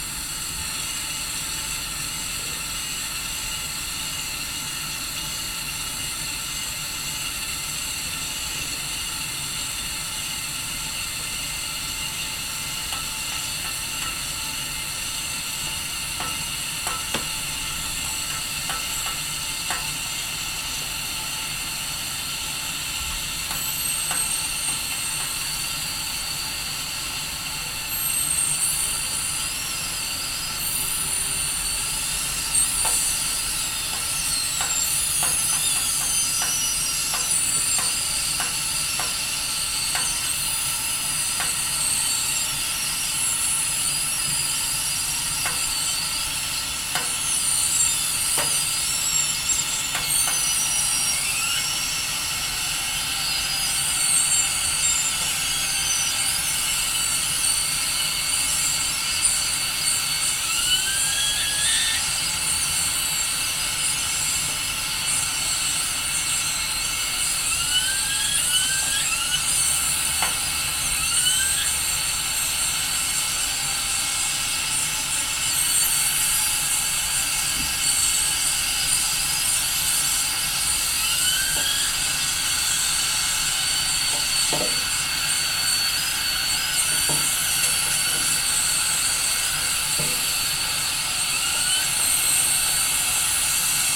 Poznan, Kochanowskiego street - kettle warm up/cool down
boiling water for tea in a tin kettle. Buildup and part of the cool down. (sony d50)